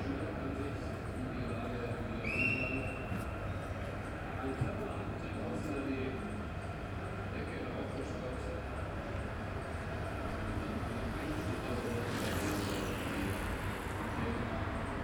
Berlin, Hobrecht- / Bürknerstr. - european championship, street ambience
street ambience in front of pub, during the european soccer championship
Berlin, Germany